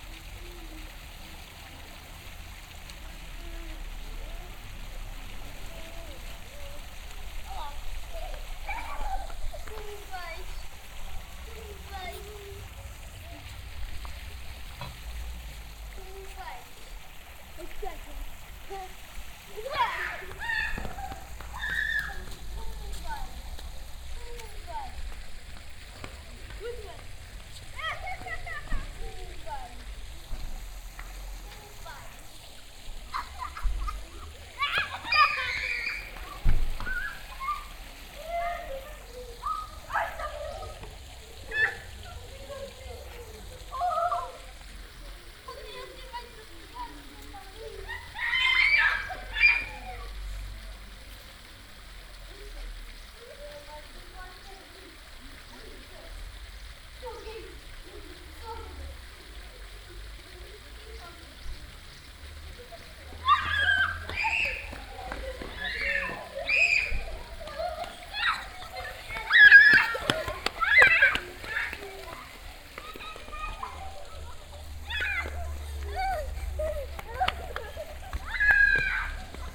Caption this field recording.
A water fountain at the beginning of the towns small traffic free zone. The sound of the water and children running around, screaming in local tongue and playing catch me. Recorded in the early evening on a warm windy summer day. Clervaux, Springbrunnen, Ein Springbrunnen am Beginn der Fußgängerzone der Stadt. Das Geräusch von Wasser und rennenden Kindern, im lokalen Dialekt rufend und Fangen spielend. Aufgenommen am frühen Abend an einem warmen windigen Sommertag. Clervaux, jet d’eau, Une fontaine qui crache son jet à l’entrée de la zone piétonne de la ville. Le bruit de l’eau et des enfants qui courent, s’interpellent dans le dialecte local et jouent au chat et à la souris. Enregistré tôt le matin, un jour d’été chaud et venteux. Projekt - Klangraum Our - topographic field recordings, sound objects and social ambiences